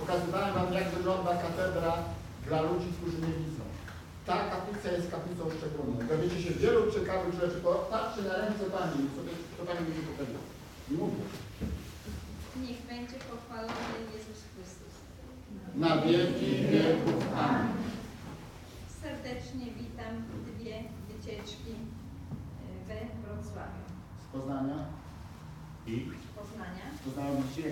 Installation of small mechanical figurines that dance and pray around a nativity. A class of children walks in during the recording.